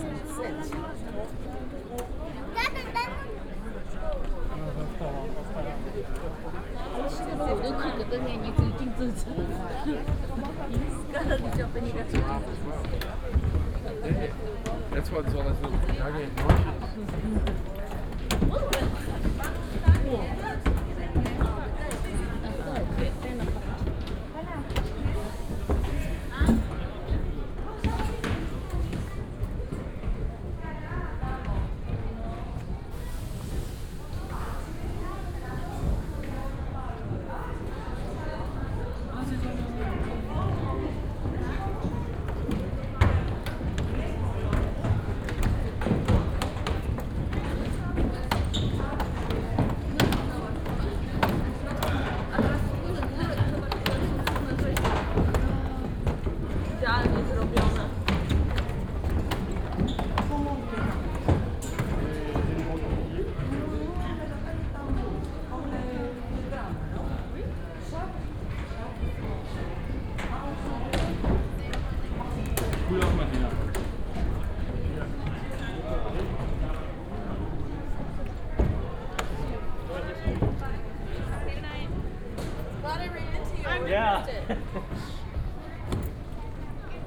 Athens, Acropolis - steps at the main gate
(binaural) first part of the recording is made on stone steps a the gate to Acropolis. tourists and guides of all nationalities move slowly towards the entrance. for the second part i move a few steps further into structure where wooden steps and floor is attached to a scaffolding. steps of tourists boom and reverberate of the close walls. (sony d50 + luhd pm-01bin)
Athina, Greece